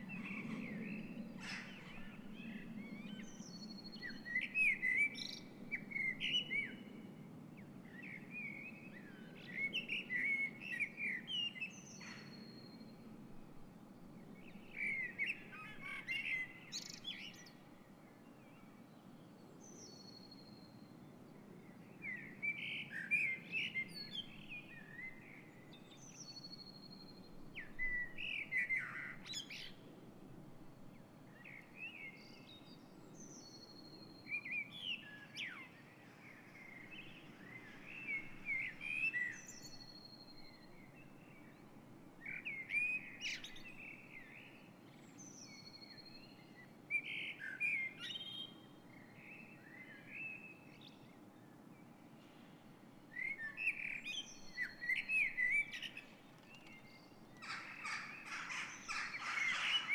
Kortenbos, Den Haag, Nederland - Dawn Chorus
06:00 AM Dawn Chorus. With Jackdaws, Seagulls and a pigeon added to the usual bunch (Blackbird, Robin, Wren etc.).
Zoom H2 internal mics.